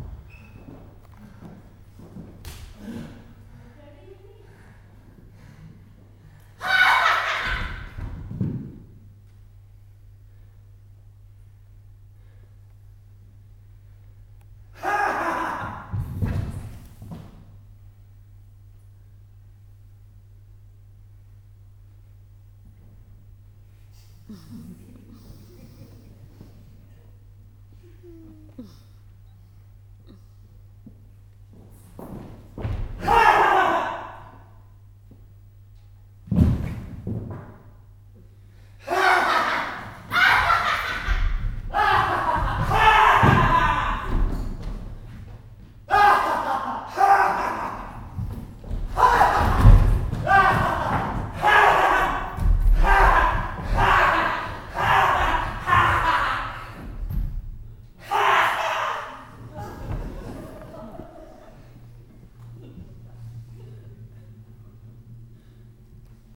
bonn, frongasse, theaterimballsaal, bühnenmusik killer loop - bonn, frongasse, theaterimballsaal, puls und lachen
soundmap nrw - social ambiences - sound in public spaces - in & outdoor nearfield recordings